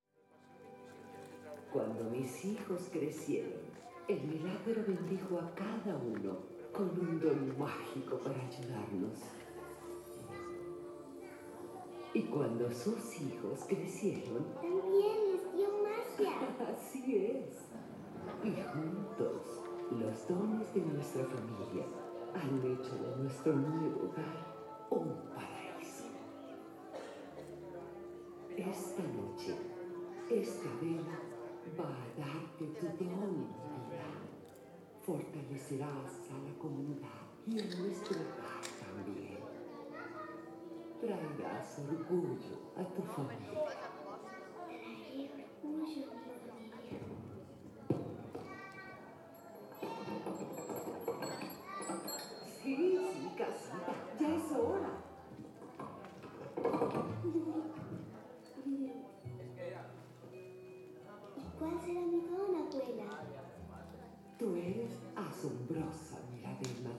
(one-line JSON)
{"title": "Plaça de Gran Canaria, Bolulla, Alicante, Espagne - Bolulla - Espagne - Cinéma en plein air", "date": "2022-07-15 21:45:00", "description": "Bolulla - Province d'Alicante - Espagne\nCinéma en plein air\nAmbiance 1\nZOOM F3 + AKG 451B", "latitude": "38.68", "longitude": "-0.11", "altitude": "217", "timezone": "Europe/Madrid"}